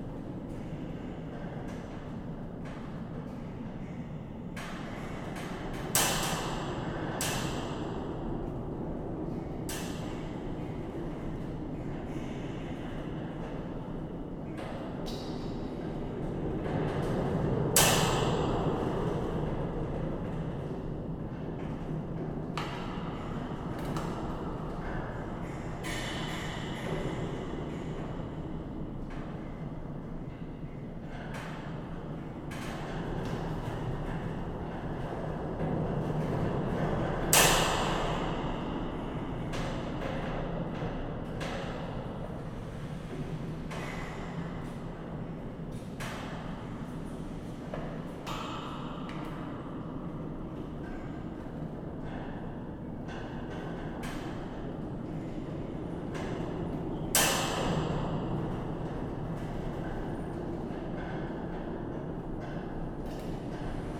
Construction fence, East End Calgary
contact mic on a construction fence in the East End district of Calgary which is facing rapid development
AB, Canada, 21 April 2010